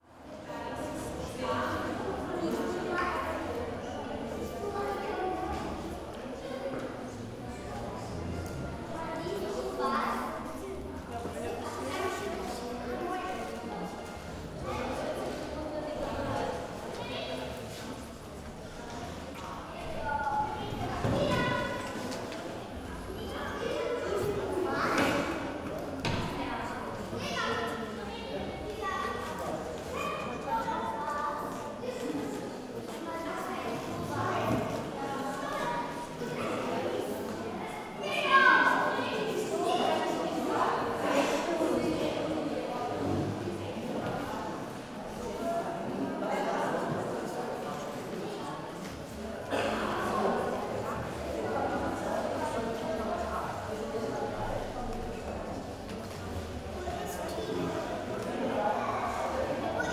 Berlin, Urbanstr., Nachbarschaftshaus - inner hall ambience
Sommerfest Nachbarschaftshaus (summer party at neighbourhood house), people of all ages from the neighbourhood gather here, the building also hosts a kindergarden. inner hall ambience.